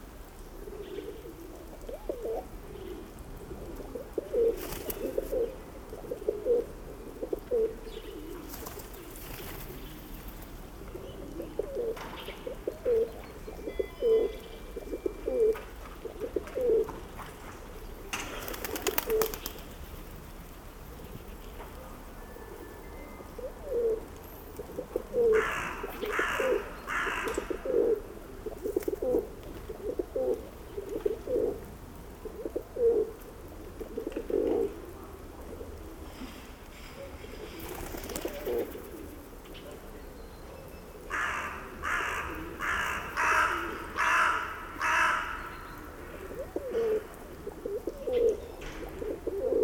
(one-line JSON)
{"title": "Russia, Severodvinsk - Birds in the city", "date": "2013-01-04 13:15:00", "description": "Birds in the city\nrecorded on zoom h4n + roland cs-10em (binaural recording)\nптицы в одном из дворов города Северодвинска", "latitude": "64.54", "longitude": "39.78", "altitude": "7", "timezone": "Europe/Moscow"}